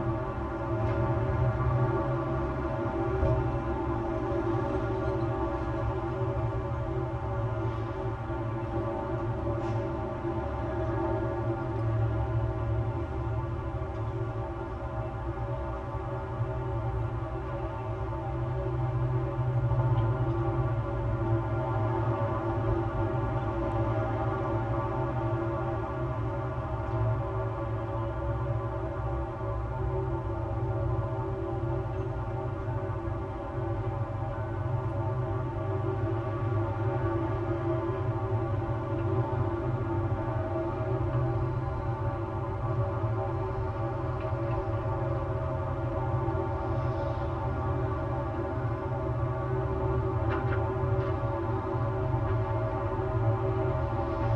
a series of poles along the riverside that once supported handrails for the now-overgrown staircase down to the waters edge. the handrails are gone, leaving the poles open to resonate with the surrounding noise. all recordings on this spot were made within a few square meters' radius.
Maribor, Slovenia, 27 August 2012